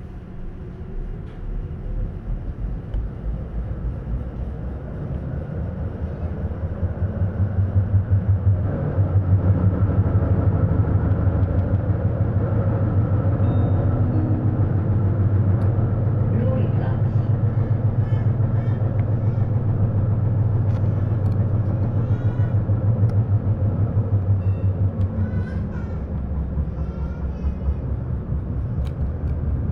Bern, Schweiz - Bern, Linie 7, Kaufmännischer Verband bis Steigerhubel
Tram ride Recorded with an Olympus LS 12 Recorder using the built-in microphones. Recorder hand held.
Verwaltungsregion Bern-Mittelland, Bern/Berne, Schweiz/Suisse/Svizzera/Svizra, 3 September 2021, 08:30